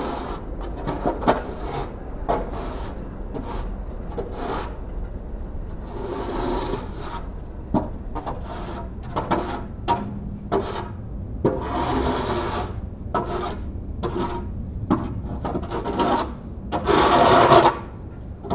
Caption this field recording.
Street sounds after a snow storm. Man shoveling the snow from the sidewalks. Zoom h6